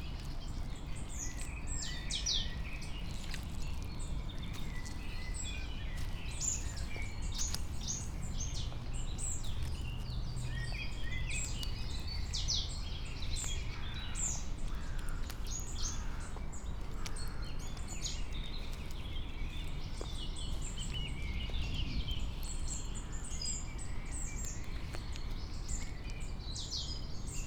Mariborski otok, river Drava, tiny sand bay under old trees - tree branches descend, touching water surface
just after rain has stopped, slow walk